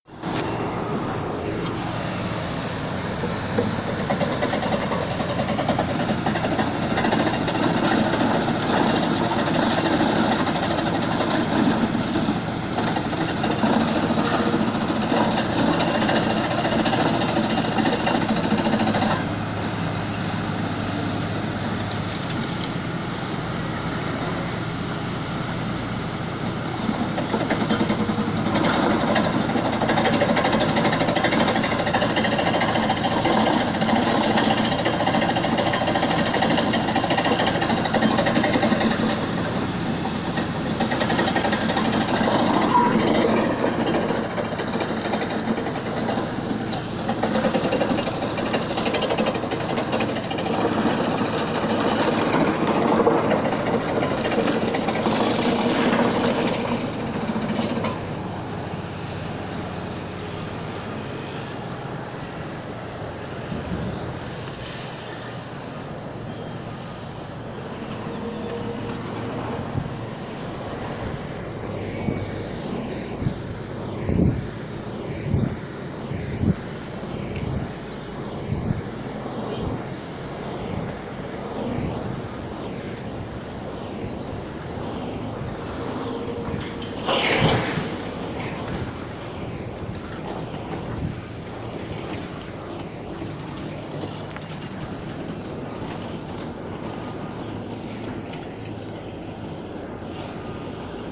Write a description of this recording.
Men and machines at work on a road under the bridge. They're working on the public and private reconstruction after the Earthquake of 2009.